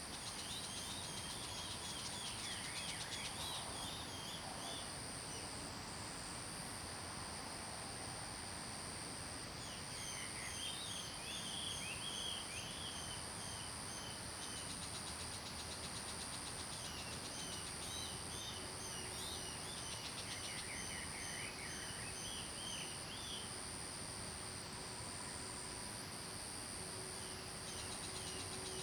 桃米里, Puli Township, Taiwan - Early morning
Early morning, Birds singing
Zoom H2n MS+XY
Puli Township, 桃米巷11-3號